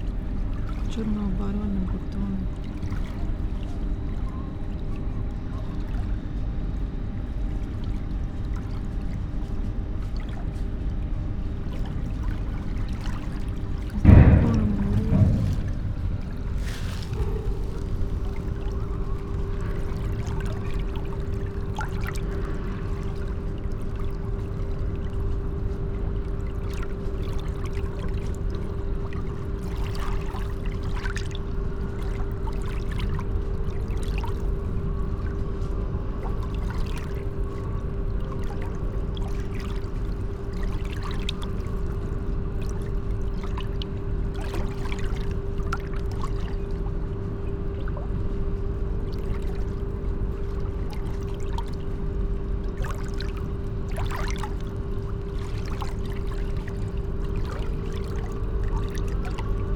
Plänterwald, Berlin, Germany - frog, november
river Spree with free overflowing waves, pale green frog jumps in front of my eyes, flops itself into square hole in concrete surface, after few moments she is out again, sitting, focusing on descended colorful microphone bubbles, after that she's gone ... crows, cement factory
8 November 2015, ~16:00